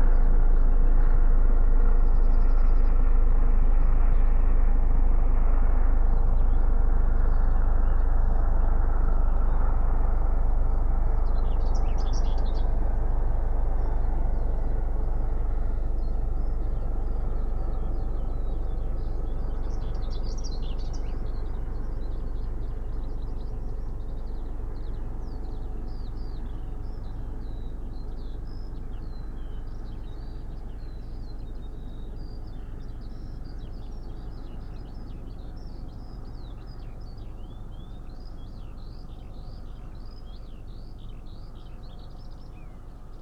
Unnamed Road, Malton, UK - dawn patrol ... hill top ...
dawn patrol ... hill top ... xlr SASS to Zoom H5 ... police helicopter flew over bird recording gear left out previously ...... bird calls ... song ... rook ... whitethroat ... yellowhammer ... skylark ...
England, United Kingdom, 2 June